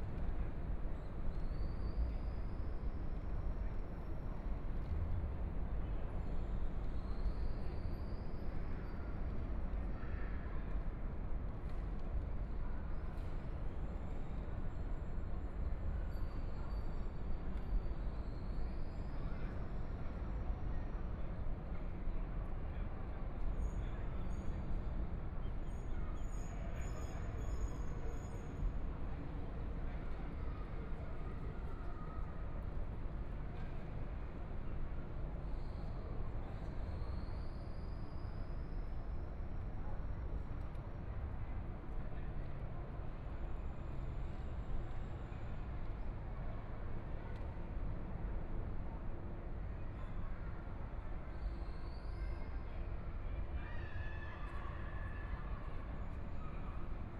Sitting below the bridge
Binaural recordings, ( Proposal to turn up the volume )
Zoom H4n+ Soundman OKM II